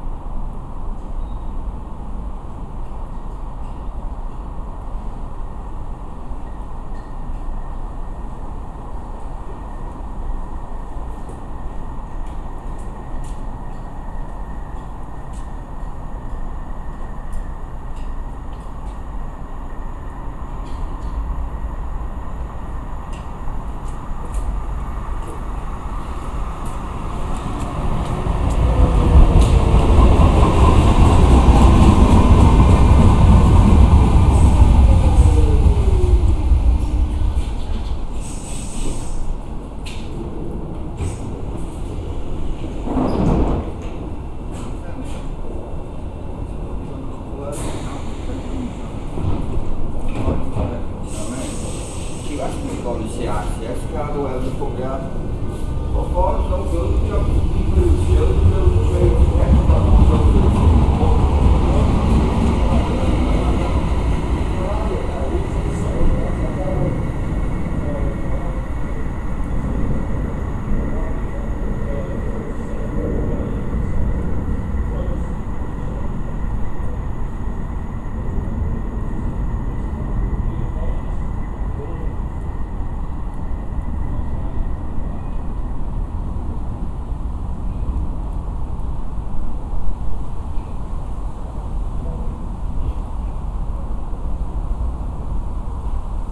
The Tram Station at Prestwich, Manchester.
Manchester UK, 29 October 2008